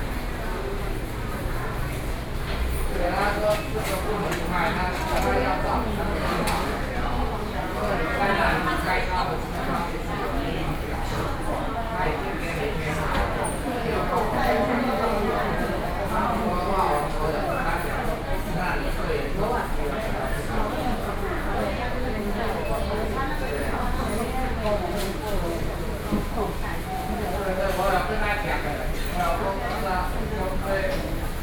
Taipei Chang Gung Memorial Hospital, Taipei City - in the hospital
Taipei City, Taiwan, 29 October 2012, 15:34